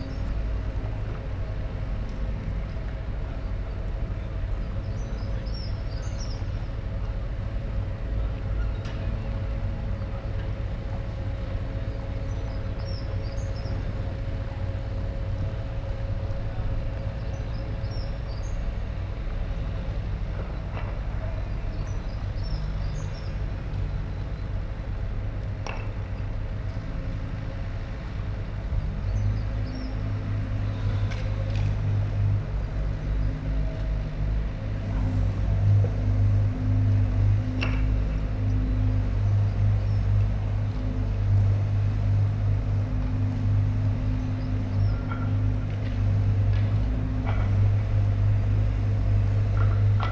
Jupiterkade, Binckhorst, Den Haag - work by canal
drones from digging machines on opposite bank, voices, singing, birds. Soundfield Mic (Blumlein decode from Bformat) Binckhorst Mapping Project
Jupiterkade, Laak, The Netherlands, 28 February